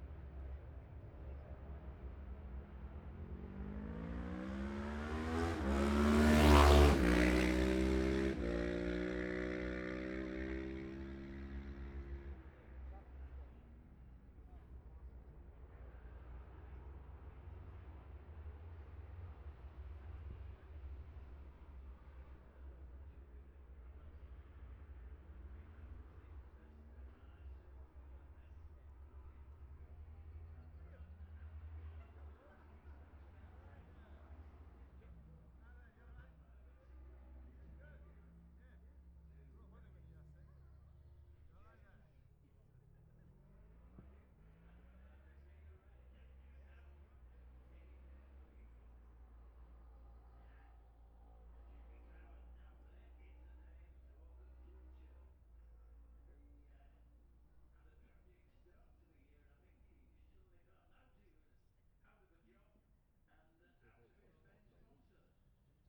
Jacksons Ln, Scarborough, UK - olivers mount road racing 2021 ...
bob smith spring cup ... twins group A practice ... luhd pm-01 mics to zoom h5 ...
22 May, 10:20